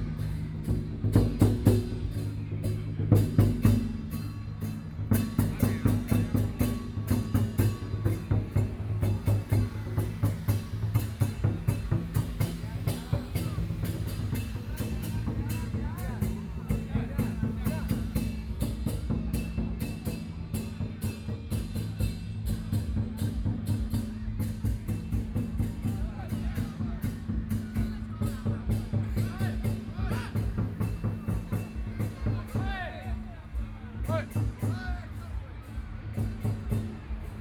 Traditional Festivals, The sound of firecrackers, Traffic Sound
Please turn up the volume a little. Binaural recordings, Sony PCM D100+ Soundman OKM II
Taipei City, Taiwan, 12 April 2014, 20:29